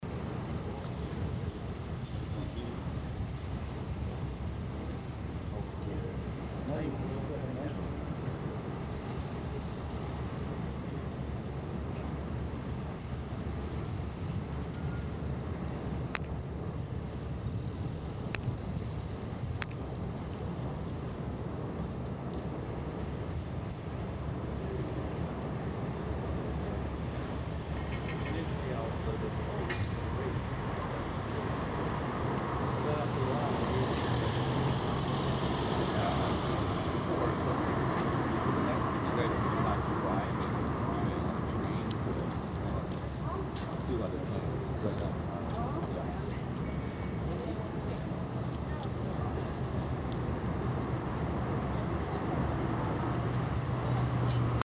The Mystic Sound
A constant sound from somewhere on the roof, it sounds like dried peas running through a plastic pipe. Its impossible to derive its source.
Stockholm urban area, Sweden, 17 July 2011, 14:39